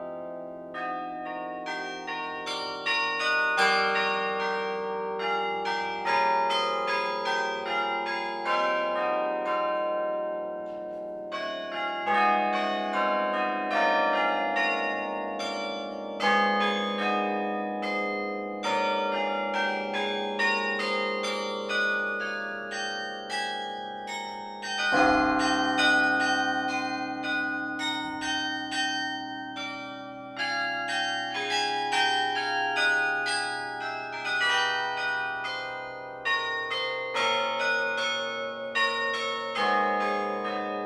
Les Pinces, Pl. de la République, Tourcoing, France - Église St-Christophe - Tourcoing - Carillon
Église St-Christophe - Tourcoing
Carillon
Maître carillonneur : Mr Michel Goddefroy
1 July 2020, 16:00